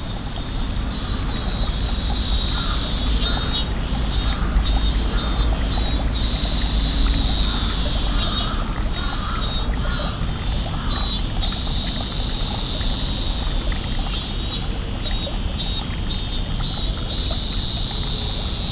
tokyo, kiyosumishirakawa garden, cicades
at a small traditionel japanese fountain, an evening closing anouncement some muzak and the sensational strong cicades in the nearby trees
international city scapes - social ambiences and topographic field recordings